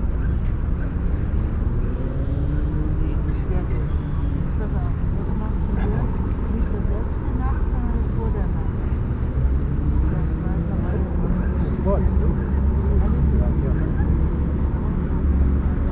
molo Audace, Trieste, Italy - bench
evening sea hearers / seerers, spoken words
September 2013